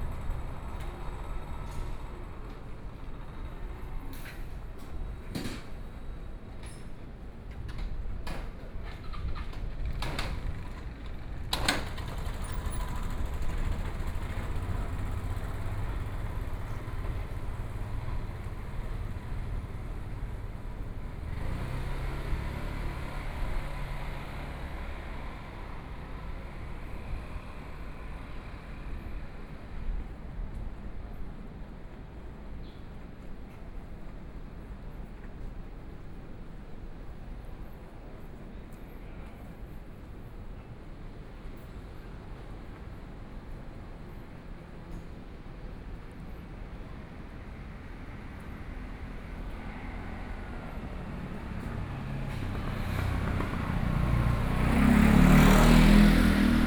台北市中山區, Taiwan - Small roadway
Traffic Sound, Noon break a lot of people walking in the road ready meal, Walking in the streets, Various shops sound